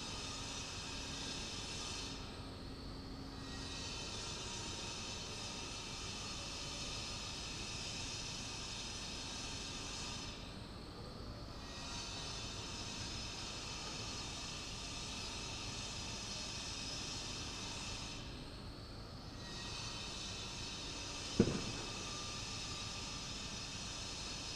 Mendělejevova, Ústí nad Labem-město-Ústí nad Labem-centrum, Czechia - construction work
A short recording with a microphone i made.
Severozápad, Česko, 26 November 2019